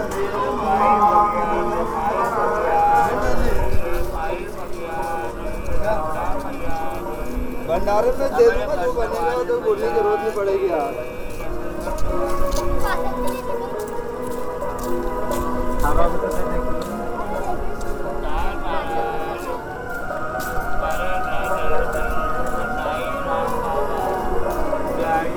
Omkareshwar, Madhya Pradesh, Inde - Praise in the dark
Because of a powercut, the city of Omkareswhar is in the dark. A man is praying on the bridge above the Narmada river. Then the power comes back and the music played in the nearby market can be heared.